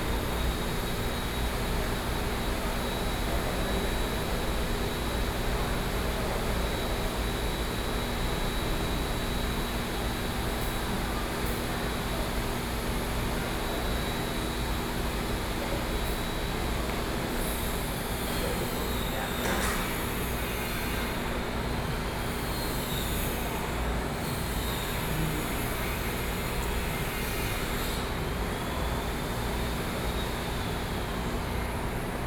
Chiayi Station, TRA, Chiayi City - Station hall
Construction noise, Message broadcasting station, The sound is very loud air conditioning, Sony PCM D50 + Soundman OKM II
Chiayi City, Taiwan, 26 July, ~16:00